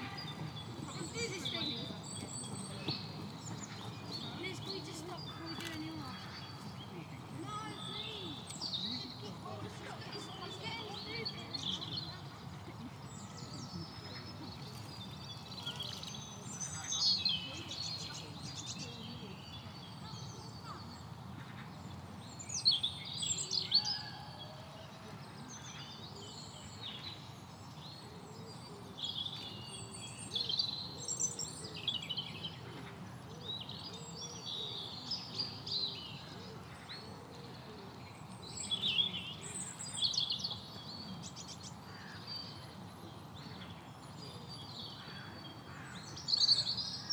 People on a walk, birds, trucks.
Colchester, Essex, UK, 9 March 2016, ~15:00